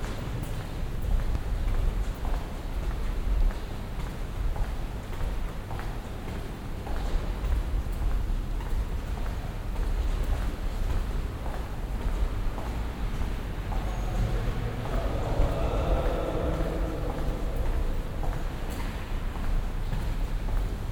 Gang durch das Treppenhaus, durch den Hauptgang Erdgeschoss bis zum Ausgang Eiskellerstrasse
soundmap nrw: social ambiences/ listen to the people - in & outdoor nearfield recordings
eiskellerstrasse, kunstakademie